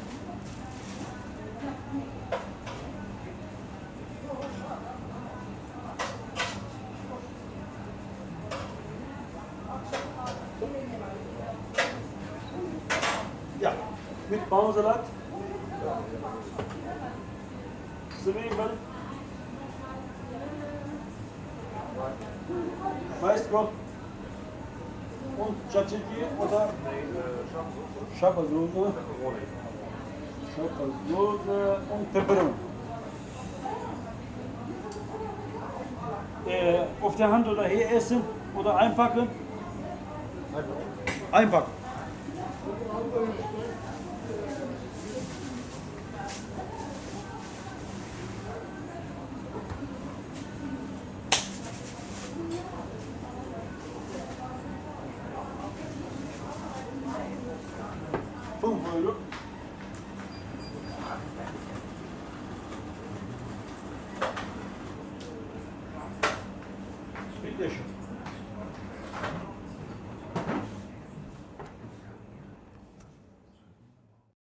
koeln, turkish snack bar
recorded june 20th, 2008.
project: "hasenbrot - a private sound diary"